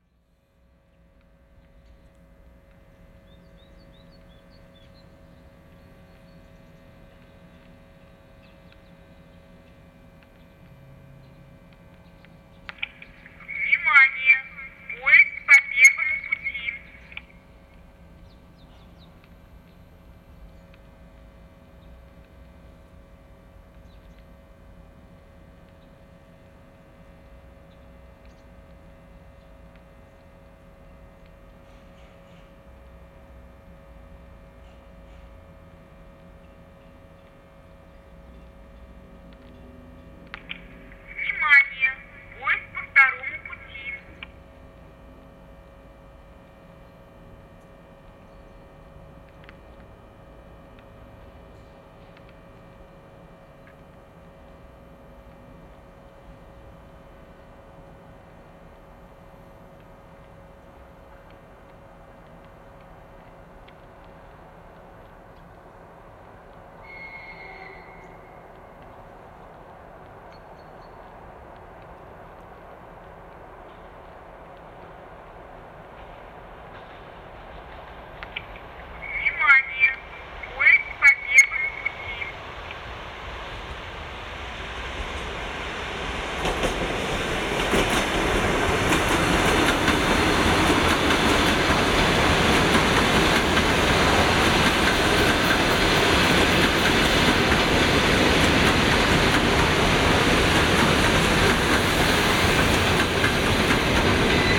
Комитетская ул., Королёв, Московская обл., Россия - Train warning
This fragment conteins female voice warning about trains coming by the way number one and the way number two and the sound of passing trains.
Used Zoom H2n and Roland CS-10EM stereo microphone
Центральный федеральный округ, Россия